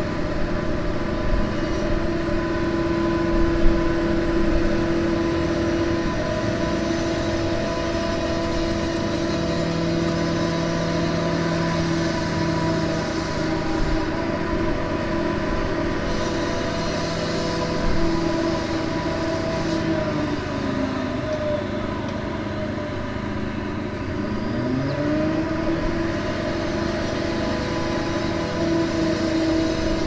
Fontanna Pauckscha, Sikorskiego, Gorzów Wielkopolski, Polska - Dry Paucksch Fountain
Sound captured from the bottom of the dry fountain near cathedral. Some construction noises in the background...
województwo lubuskie, Polska, 23 April 2020